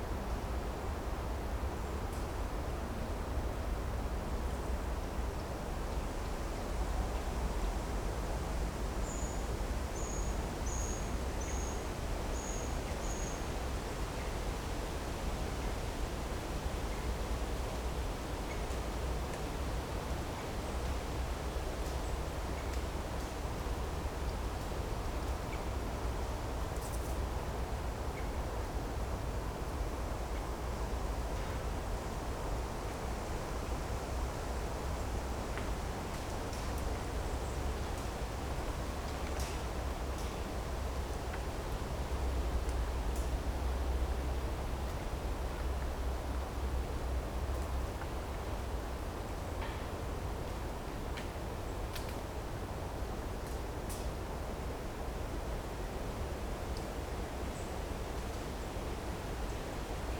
Morasko nature reserve - forest clearing
autumn wind brings down lots of leaves, branches and acorns. this a quite spacious area of the forest, not too many bushes near the forest floor. quite nice to listen to wind playing among trees (roland r-07 internal mics)